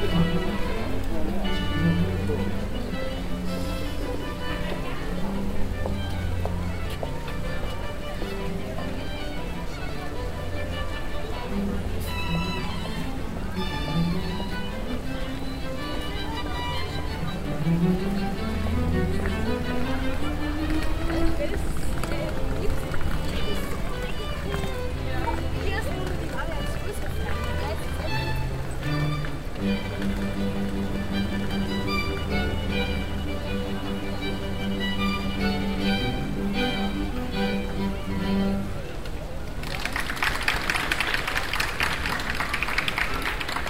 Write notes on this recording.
cityscapes, recorded summer 2007, nearfield stereo recordings, international city scapes - social ambiences and topographic field recordings